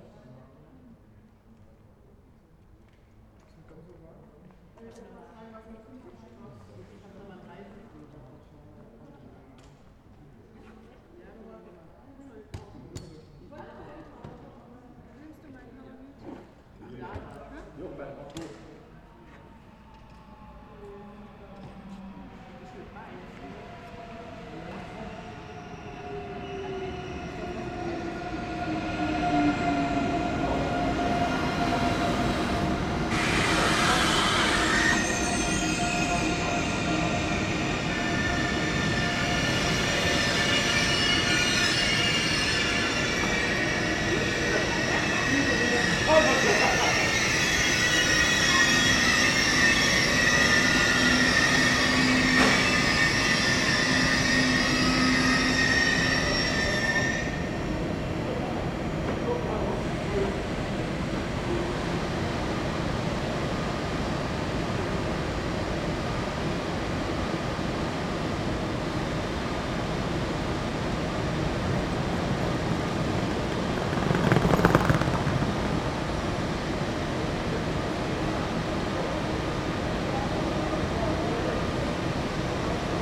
Limburg Süd, ICE Bahnhof / station - Zug Ankunft / train arrival
ICE aus Frankfurt kommt an.
Der Bahnhof Limburg Süd liegt in der Nähe der mittelhessischen 36.000-Einwohner-Kreisstadt Limburg auf dem Eschhöfer Feld-Gebiet des Limburger Stadtteils Eschhofen beim Streckenkilometer 110,5 der Schnellfahrstrecke Köln–Rhein/Main [...]Durchfahrende ICE können den Bahnhof darauf ohne Geschwindigkeitsverminderung mit bis zu 300 km/h passieren.
ICE from Frankfurt arrives.
The station is served by regular InterCityExpress services. Due to Limburg's relatively small size, passenger traffic is rather low, although commuters to Frankfurt am Main value the fast connections. Some 2,500 people use the station daily. The station has four tracks in total, of which two are equipped with a platform and two allow through trains to pass the station unobstructed at speeds of up to 300 km/h. Track one's platform, used by trains to Frankfurt, Mainz and Wiesbaden, also houses the ticket office. A bridge connects it to track four, which is used by trains going to Köln.
Limburg an der Lahn, Germany, August 2009